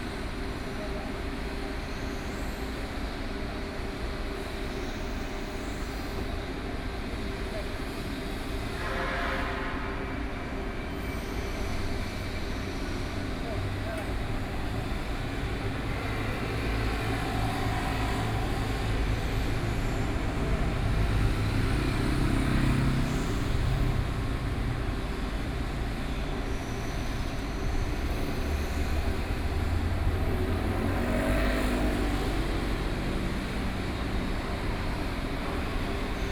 Zaochuan Rd., Su'ao Township - Shipyard noise
Shipyard noise, Traffic Sound
Suao Township, Yilan County, Taiwan, 28 July 2014